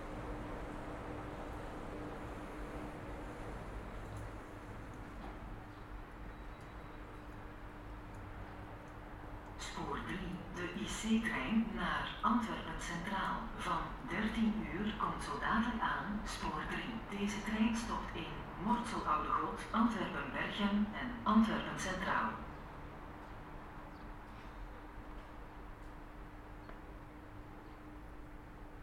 Mechelen-Nekkerspoel, Mechelen, België - Perron Nekkerspoel
[Zoom H4n Pro] Platform at Mechelen-Nekkerspoel train station.